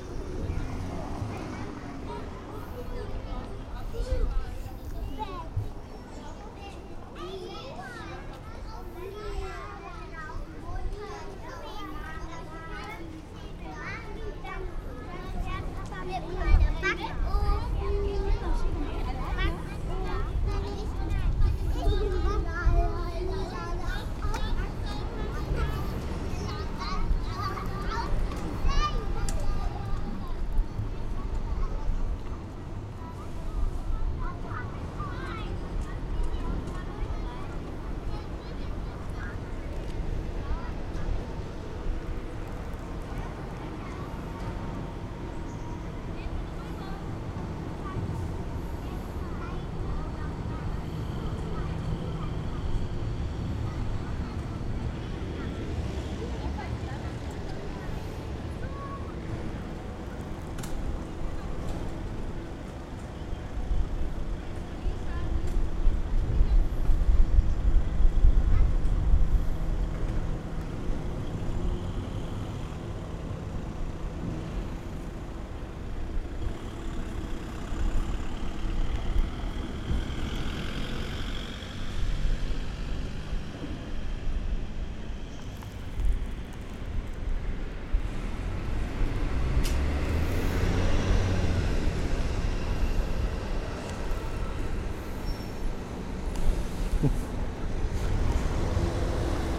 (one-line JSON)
{"title": "leipzig, nathanaelkirche. kindergruppe und die müllabfuhr.", "date": "2011-09-01 11:30:00", "description": "vor der nathanaelkirche. eine kindergruppe geht vorbei und dann kommt ein fahrzeug von der müllabfuhr vorbei.", "latitude": "51.34", "longitude": "12.33", "altitude": "110", "timezone": "Europe/Berlin"}